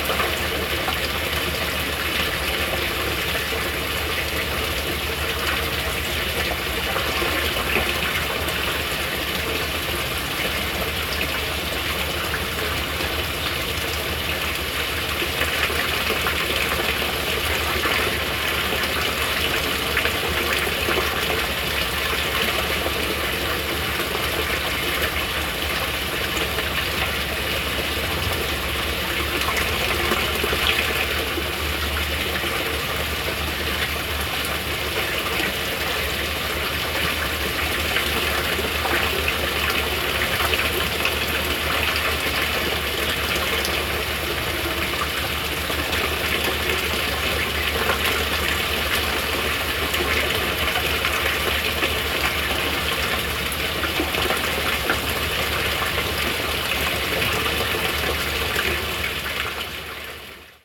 Harber St, Alexandria NSW, Australia - Water Feature in Sydney Park
Sound of the water feature and drain